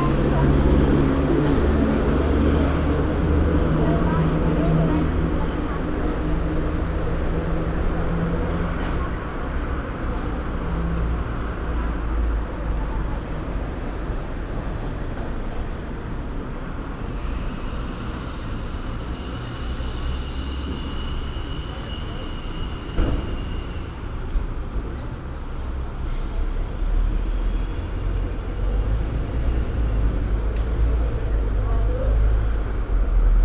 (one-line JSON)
{"title": "Kaunas, Lithuania, Liberty Avenue night", "date": "2022-07-25 23:15:00", "description": "Laisvės Alėja (literally Liberty Boulevard or Liberty Avenue) is a prominent pedestrian street in the city of Kaunas. Night time, listening through open hostel window.", "latitude": "54.90", "longitude": "23.90", "altitude": "32", "timezone": "Europe/Vilnius"}